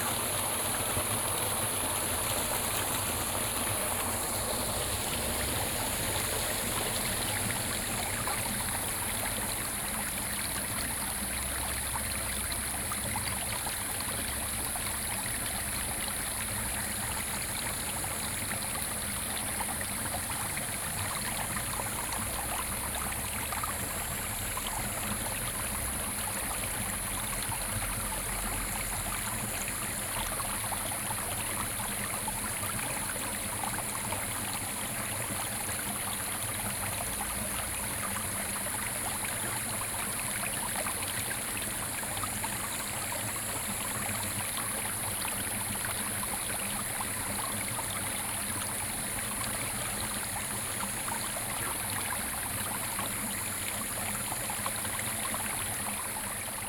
Xiaopingding, Tamsui River, New Taipei City - sound of the Stream
Frog calls, Stream, Sony PCM D50
Tamsui District, New Taipei City, Taiwan